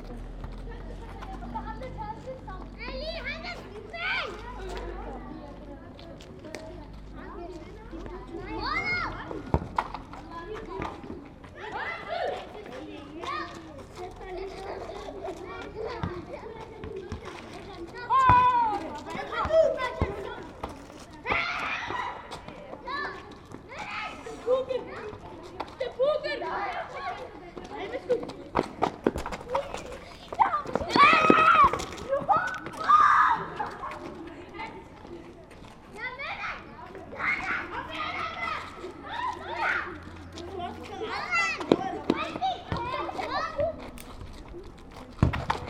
København, Denmark - Children playing football
Into a small street corner, some children playing football. They are happy and make a lot of noise !
16 April 2019